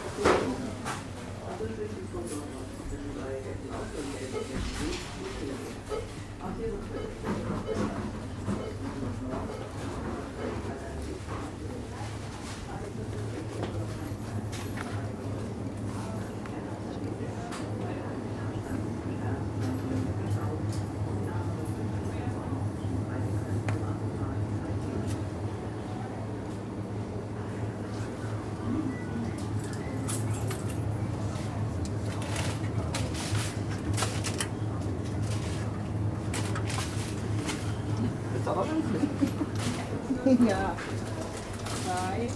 Ruppichteroth, inside supermarket
recorded july 1st, 2008.
project: "hasenbrot - a private sound diary"